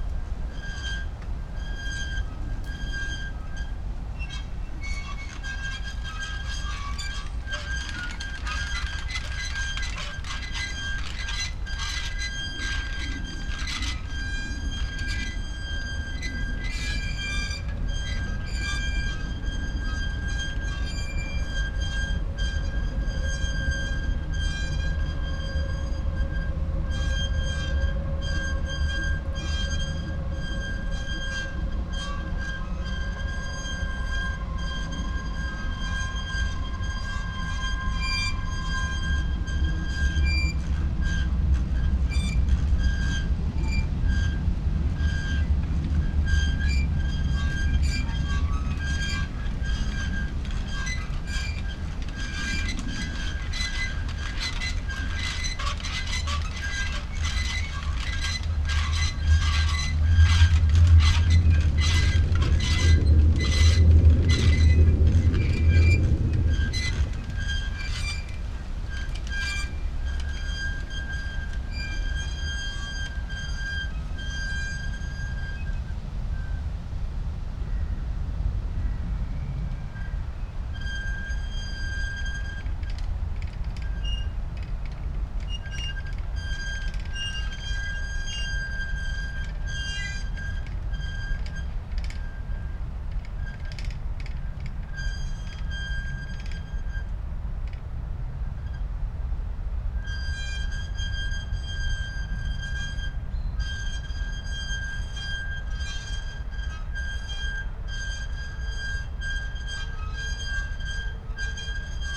Gleisdreieck, Kreuzberg, Berlin - wind wheels, city soundscape
park behind technical museum, Berlin. ensemble of squeaking wind wheels in the trees, sound of passing-by trains, distant city sounds
(Sony PCM D50, DIY Primo EM172 array)